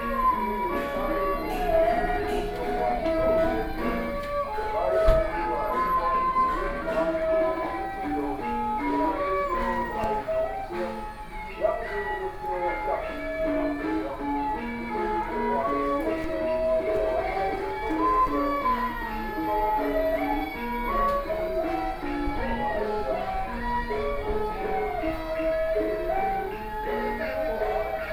Beitou, Taipei City - Traditional Ceremony
Temple Traditional Ceremony, Zoom H4n+ Soundman OKM II
Taipei City, Taiwan, August 2013